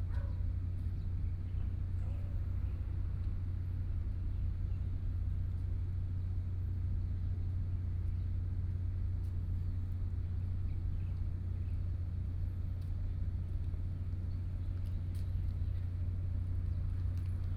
鹽埕區新化里, Kaoshiung City - Morning streets
Birds singing, Morning pier, Sound distant fishing, People walking in the morning, Bicycle
Yancheng District, Kaohsiung City, Taiwan, 14 May 2014